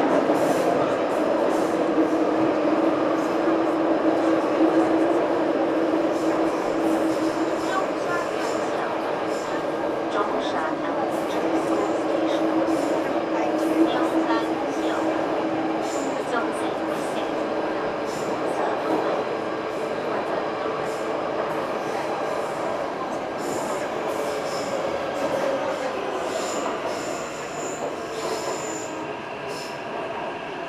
Sanchong District, New Taipei City - MRT Luzhou-Orange Line
Riding the MRT Luzhou/Orange Line (Sanhe Jr. High School Station to Minquan W. Road Station). Stereo mics (Audiotalaia-Primo ECM 172), recorded via Olympus LS-10.
30 November, ~15:00